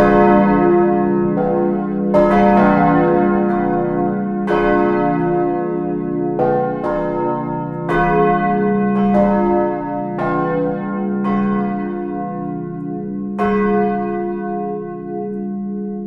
glockengeläut, direkt mikrophonie, stereo
soundmap nrw:
topographic field recordings, social ambiences
mittelstrasse, reformationskirche